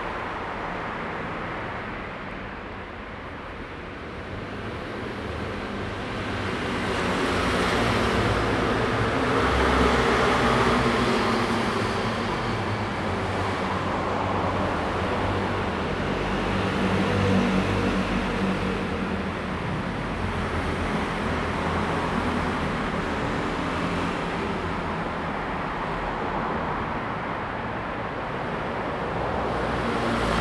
Traffic sound, Underground lane
Zoom H2n MS+XY
13 February 2017, ~15:00